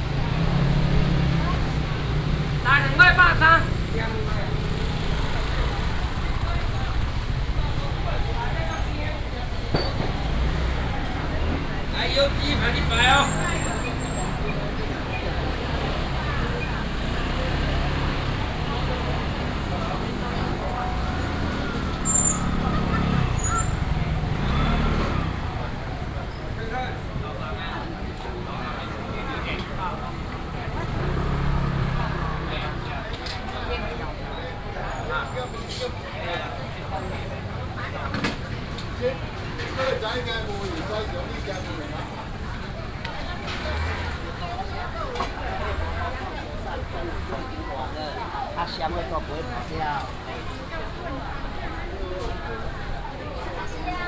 Sec., Xinren Rd., Dali Dist., Taichung City - Traditional market area
traditional market, traffic sound, vendors peddling, Binaural recordings, Sony PCM D100+ Soundman OKM II
Taichung City, Taiwan, 19 September 2017